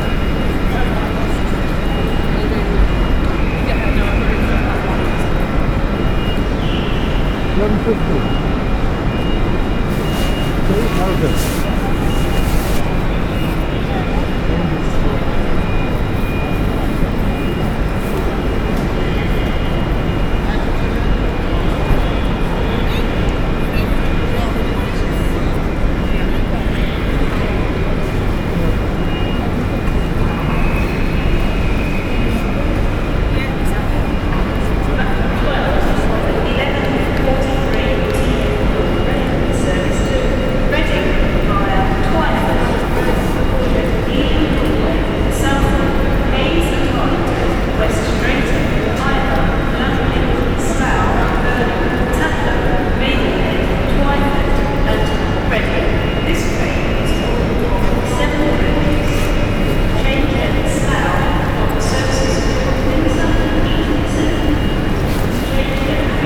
The many sounds from the main concourse of this this very busy railway station. MixPre 6 II with 2 x Sennheiser MKH 8020s.